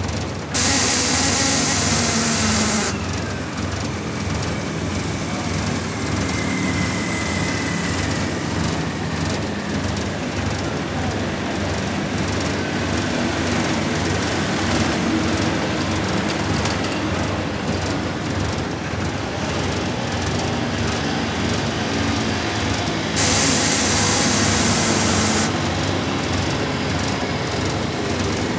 Santiago de Cuba, calle Aguilera near market place
6 December 2003, 17:52